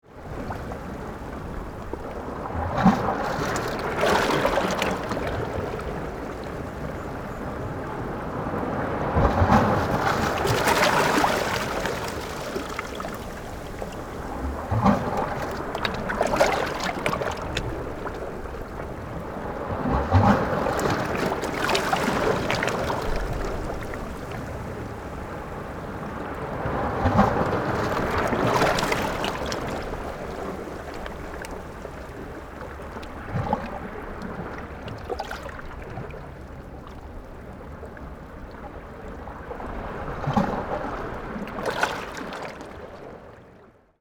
tuman, Keelung - Water flow
Seawater to flow back and forth between the rocks, Sony PCM D50 + Soundman OKM II
基隆市 (Keelung City), 中華民國, 24 June 2012